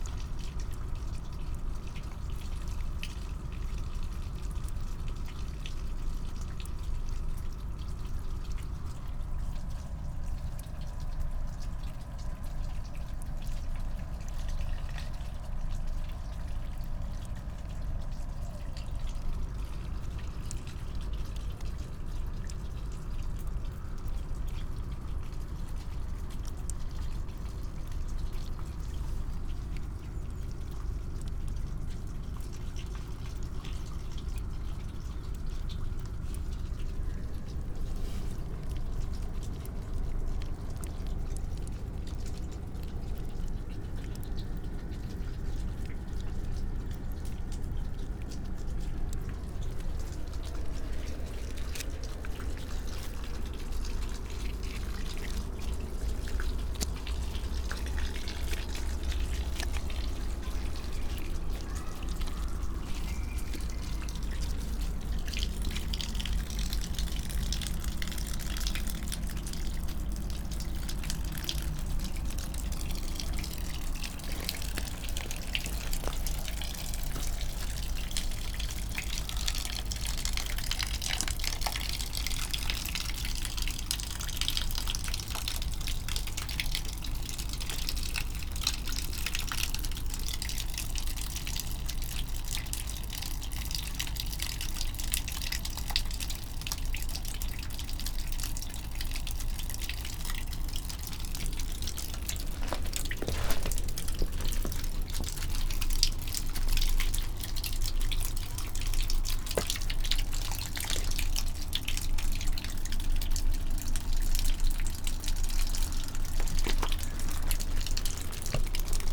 cliff, river Drava, near power plant - dripping cliff garden
spring waters, drops, trickling
Kamnica, Slovenia, 8 March 2015, ~13:00